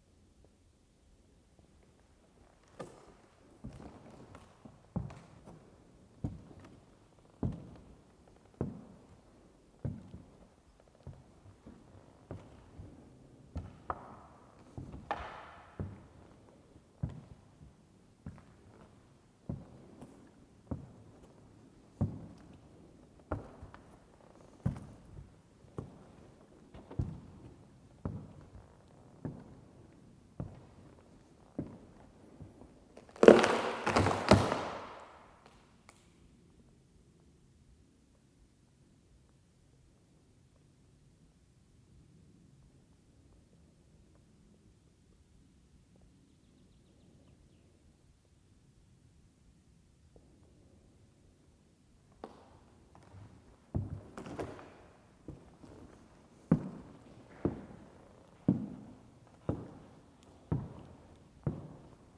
Vogelsang, ex Soviet base, Germany - Stepping on rotten floor boards in the derelict sports hall
The wooden floors in Vogelsang's sports hall crack underfoot. But outside trees now grow unhindered throughout this abandoned Soviet military base, now a nature reserve. It is a 2km walk from the station or nearest road. One is free to explore the derelict buildings, which are open to wind and weather. It is an atmospheric place that surprises with unexpected details like colourful murals and attractive wallpapers in decaying rooms. There is a onetime theater and a sports hall with ancient heating pipes dangling down the walls. Lenin still stands carved out in stone. Forest wildlife is abundant and springtime birds a joy to hear.